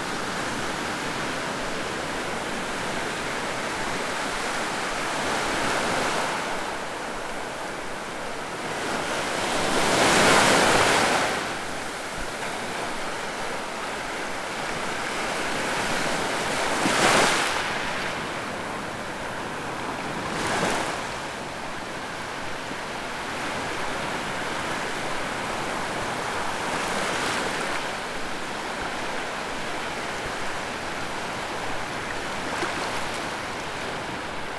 Waves breaking / Olas rompiendo